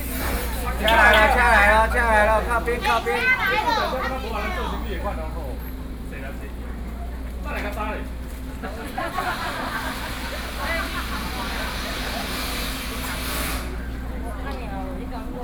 No., Jīngtóng St, New Taipei City - Small Town
Pingxi District, New Taipei City, Taiwan, 13 November 2012